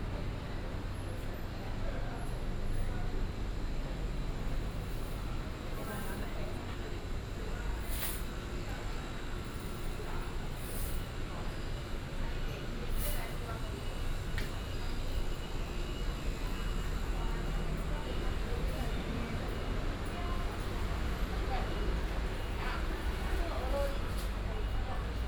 朝陽市場, Taoyuan City - Old traditional market
Walking in the Old traditional market, traffic sound